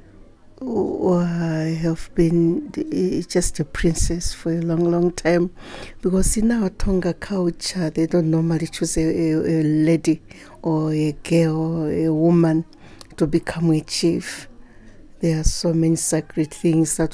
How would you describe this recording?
Trained as a nurse, and a leprosy controller in her district, Mrs Kalichi didn’t have plans leaving her job and ordinary family life. The male folk among the royal family refused to take up the vacant position of the chief... Eli Mwiinga Namazuminana Kalichi became Chiefteness Mwenda of Chikankata in 2006. In this interview, she unravels for us why she took the step that her brothers refused, and what it meant for her life to take up the traditional leadership position as a woman, and become the first ever Chiefteness in Southern Province… Today, Chiefteness Mwenda is i.a. Deputy Chair of the house of Chiefs...